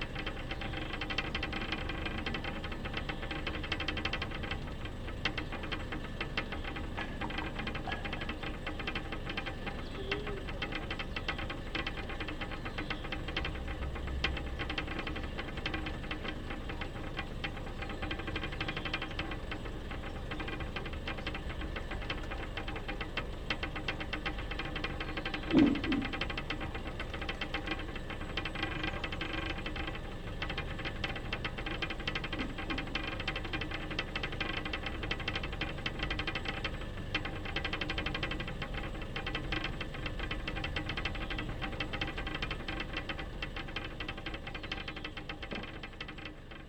storkow: am kanal - the city, the country & me: vibrating electrical pillar box nearby the lock of storkow
vibrating electrical pillar box, two boys throwing stones on the ice of the frozen canal
the city, the country & me: february 26, 2011